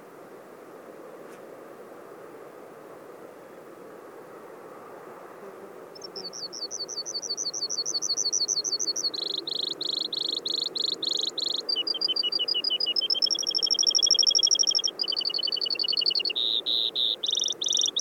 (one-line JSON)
{"title": "Timberline (Brewers) Sparrow", "date": "2011-07-03 10:35:00", "description": "Timberline Sparrow in the Krumholz just off the Scenic Point Trail", "latitude": "48.48", "longitude": "-113.34", "altitude": "1872", "timezone": "America/Denver"}